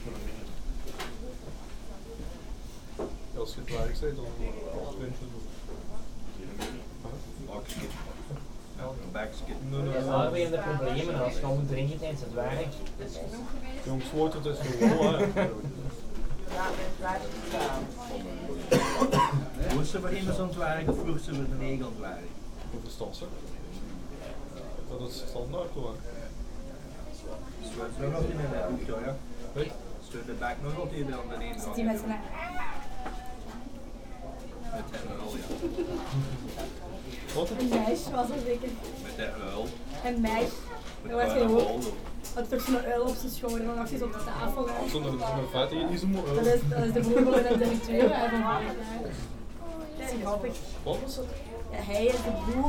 In the Vroenhoven frituur, a chip shop called Geronimo. It's very very small and very very busy ! From 0:00 to 2:00 mn, people are ordering. It's so quiet, you can't imagine it's crowded ! After 2:20 mn, people are eating and it's more animated. It's an handwork chip shop and it's a good place, where local people massively go.